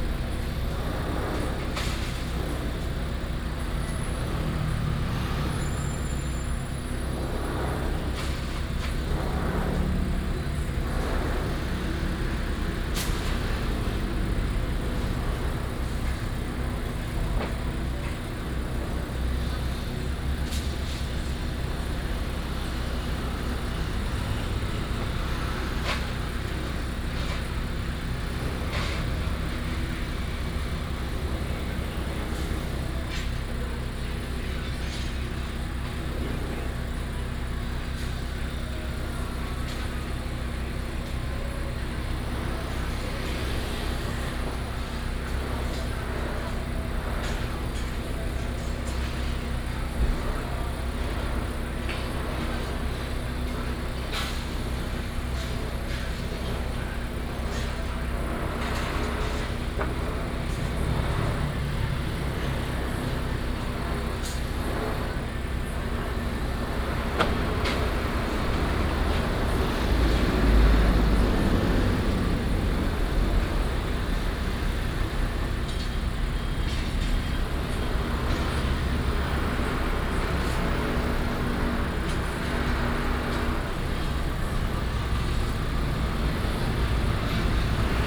健康一街, Dali Dist., Taichung City - Construction site sound
Next to the construction site, Hot weather, Traffic sound, Binaural recordings, Sony PCM D100+ Soundman OKM II